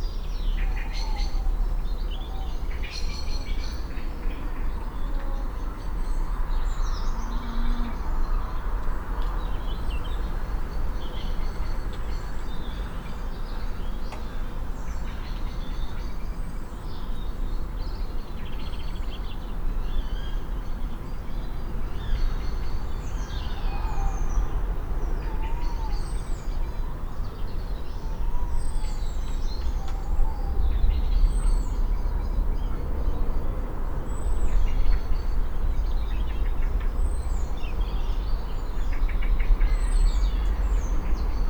Pergola, Malvern, UK - Early Morning Ambience
5am, the first birds and the last owls, shots, ducks land and take off, traffic begins.